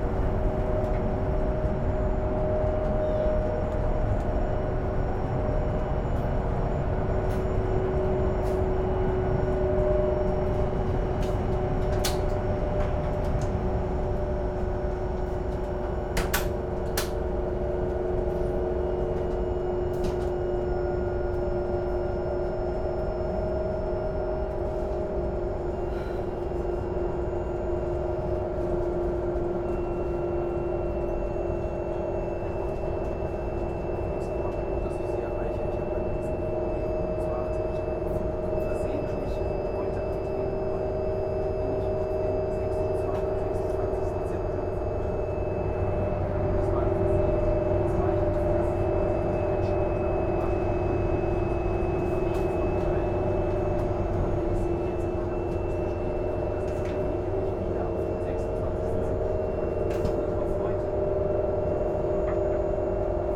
ICE route Frankfurt to Limburg - train drone
ICE train from Frankfurt airport to Limburg, precise location is unknown, +/- 5 km about... drone in train
(Sony PCM D50)